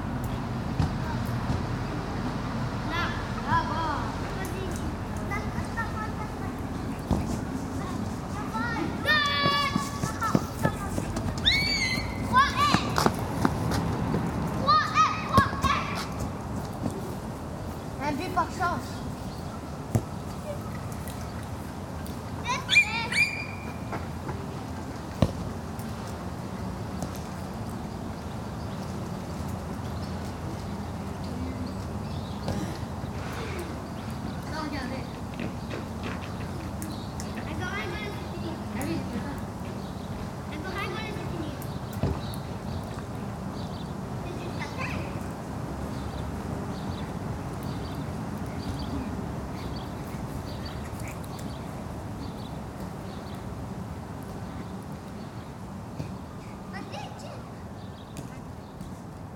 Rue de Flodorp, Bruxelles, Belgique - Children playing football
Des enfants jouent au foot.